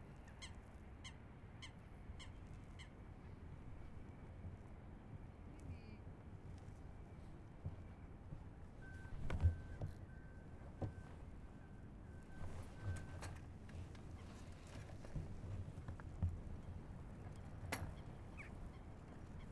Java island dog park, Javakade, Amsterdam, Nederland - Wasted Sound KNSM
Wasted Sound from the head of the KNSM.
Noord-Holland, Nederland, 4 December